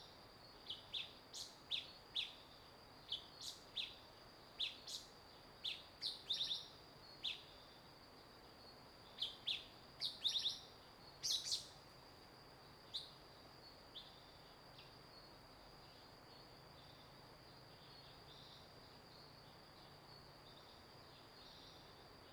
達保農場, 達仁鄉, Taitung County - Entrance in mountain farm
early morning, Bird cry, Stream sound, Entrance in mountain farm